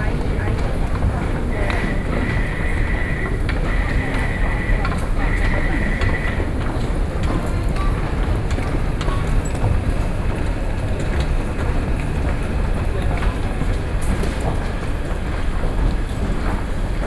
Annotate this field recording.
SoundWalk, Enter the MRT station, Across the walk to the platform, (Sound and Taiwan -Taiwan SoundMap project/SoundMap20121129-12), Binaural recordings, Sony PCM D50 + Soundman OKM II